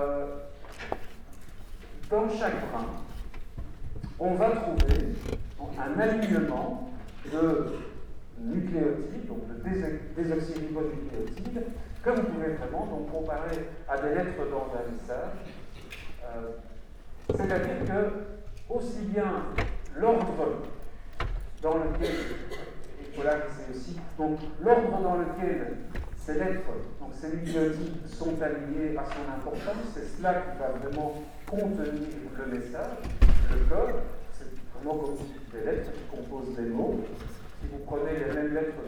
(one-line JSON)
{"title": "Centre, Ottignies-Louvain-la-Neuve, Belgique - A course of biology", "date": "2016-03-18 08:25:00", "description": "In the very very very huge Socrate auditoire, a course of Biology.", "latitude": "50.67", "longitude": "4.61", "altitude": "116", "timezone": "Europe/Brussels"}